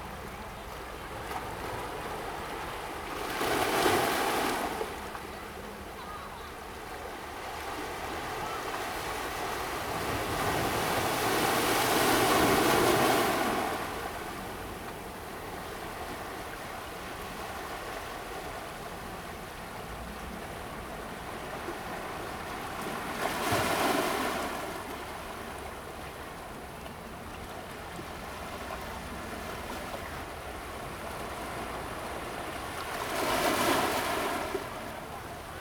大武崙澳仔漁村, Keelung City - On the coast
sound of the waves, On the coast
Zoom H2n MS+XY +Sptial Audio